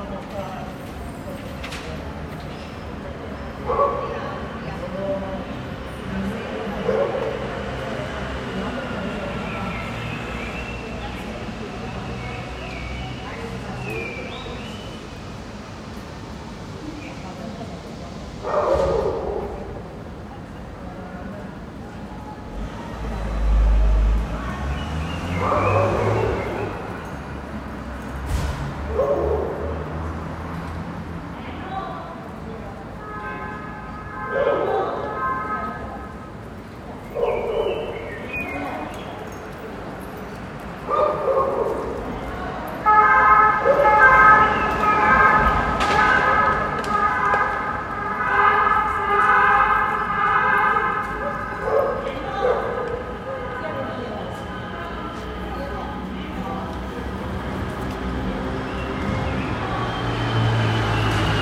Carrer de Mallorca, Barcelona, España - Lockdown: Ambient noise
Made from a building during lockdown. People talking, cars, birds, dogs.